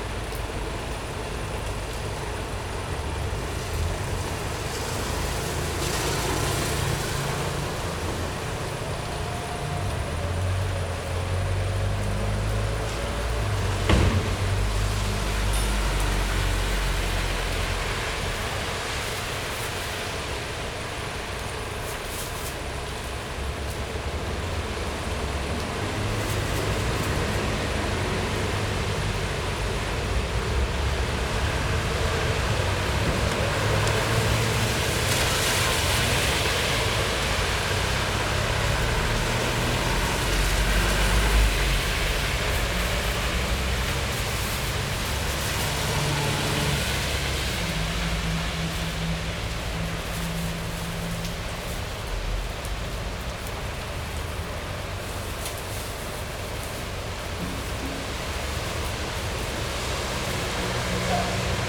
Zhongxing St., Yonghe Dist., New Taipei City - Rainy Day
Rainy Day, Traffic Sound, Early morning
Zoom H4n + Rode NT4